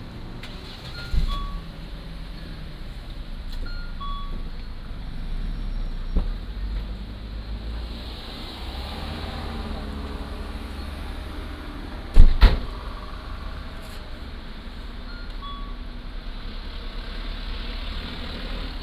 National Museum of Prehistory, Taitung City - Fountain
Fountain, The weather is very hot
Zoom H2n MS +XY